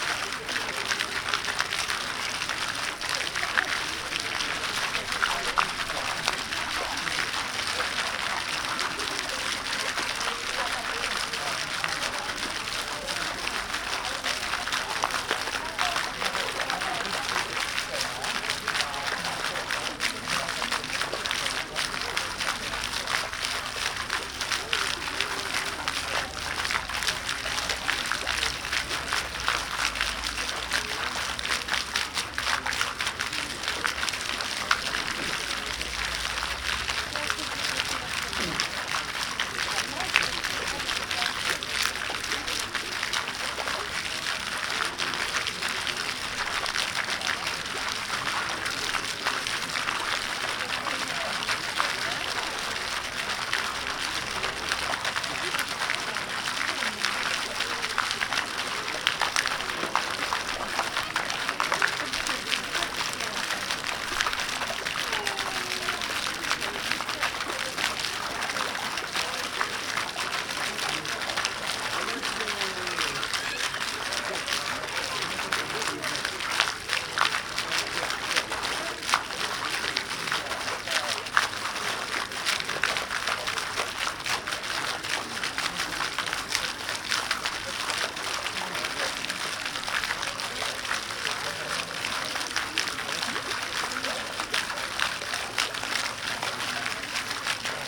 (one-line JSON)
{"title": "Łazienki Królewskie, Warszawa, Pologne - Fontanna Pałac na Wodzie", "date": "2013-08-18 18:26:00", "description": "Fontanna Pałac na Wodzie w Łazienki Królewskie, Warszawa", "latitude": "52.21", "longitude": "21.04", "altitude": "87", "timezone": "Europe/Warsaw"}